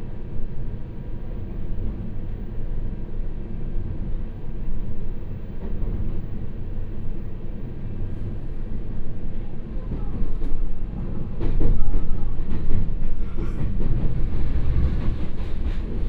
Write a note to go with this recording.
In the train compartment, from Chenggong Station to Changhua Station